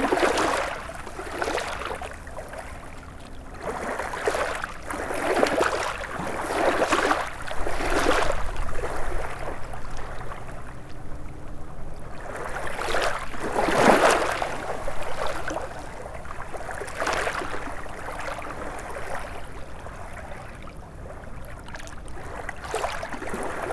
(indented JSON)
{
  "title": "Berville-sur-Mer, France - Risle river",
  "date": "2016-07-21 14:30:00",
  "description": "Sound of the Risle river, on a beach just near the Seine river. We could believe we are at the sea.",
  "latitude": "49.44",
  "longitude": "0.37",
  "altitude": "3",
  "timezone": "Europe/Paris"
}